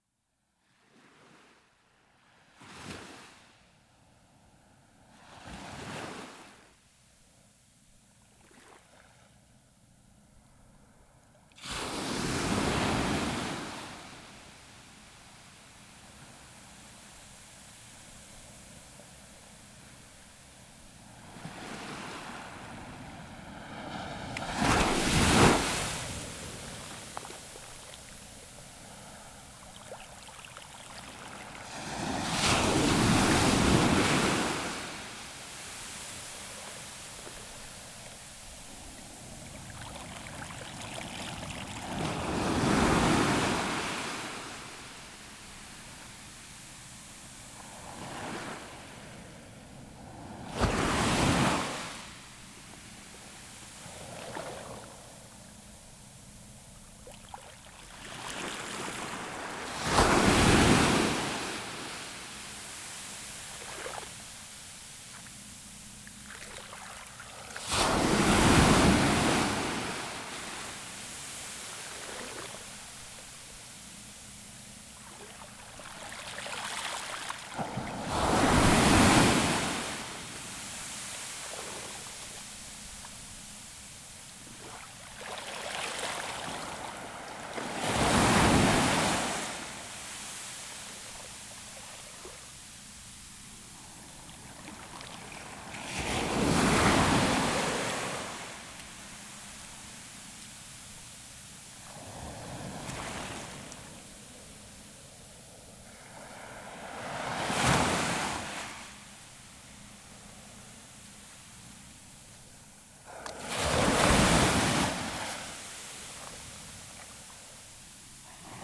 La Faute-sur-Mer, France - The sea during high tide
Recording of the sea during high tide. As the beach is wide, the waves are big and strong.
May 2018